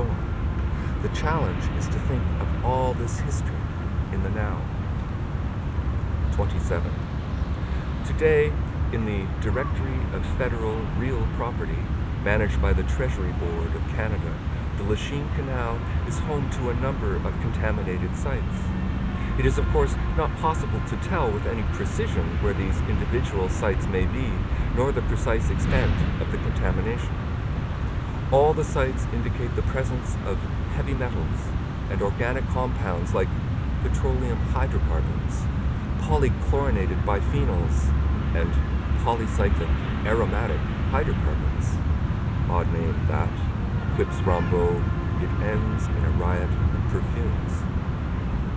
Montreal: Lachine Canal: Footbridge at Atwater - Lachine Canal: Footbridge at Atwater
Soundscape of Atwater bridge area with text about ecological history of the area read by Peter C. van Wyck.